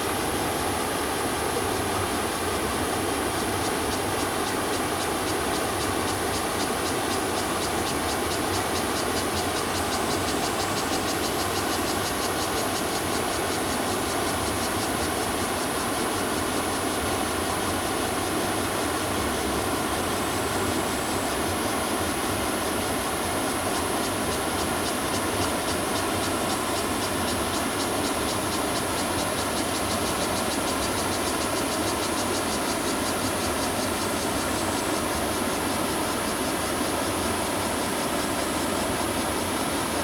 YuMen Gate, 埔里鎮成功里 - Rivers and cicadas
The sound of the stream, Rivers and cicadas, Bridge
Zoom H2n MS+XY +Spatial audio
Puli Township, Nantou County, Taiwan, 27 July 2016